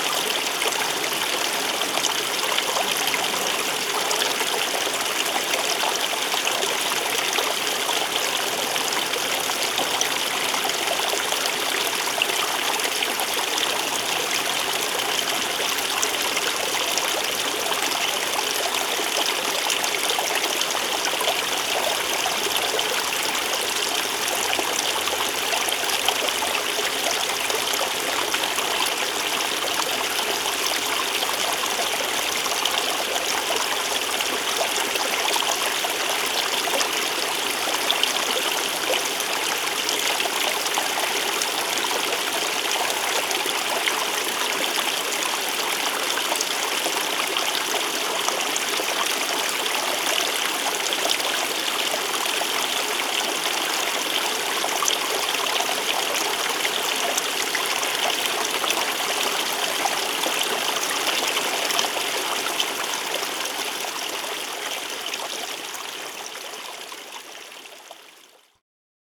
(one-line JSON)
{"date": "2006-09-16 16:30:00", "description": "Dagneux, Ruisseau / Creek Chemin des Irandes.", "latitude": "45.86", "longitude": "5.08", "altitude": "220", "timezone": "Europe/Paris"}